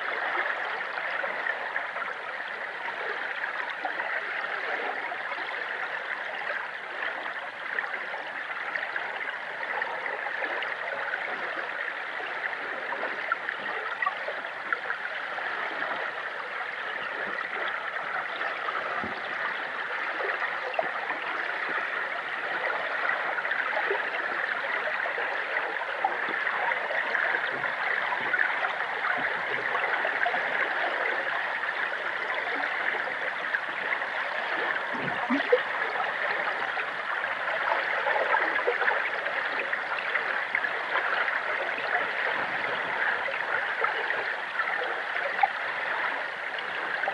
Kelvin Walkway, Glasgow, UK - Kelvin Hydrophone
Recorded on a Sound Devices 633 with an Aquarian Audio H2a Hydrophone